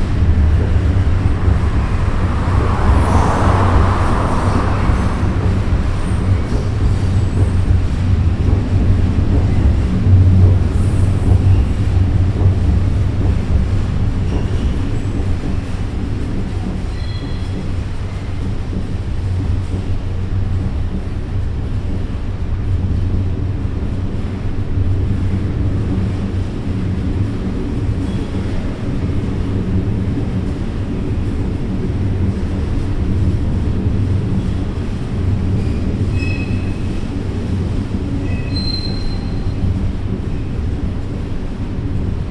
Ostrava-Mariánské Hory a Hulváky, Česká republika - Pod
Below, but not entirely under the railway bridge.
Ostrava-Mariánské Hory a Hulváky, Czech Republic, 8 November 2013